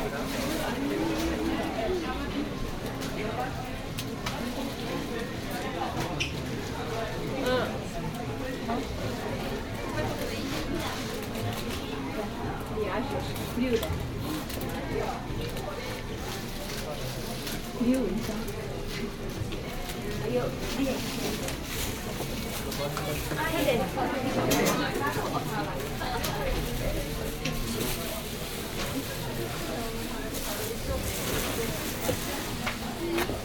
Sao Paulo, Liberdade, Shopping Trade Centre, walking over 3 floors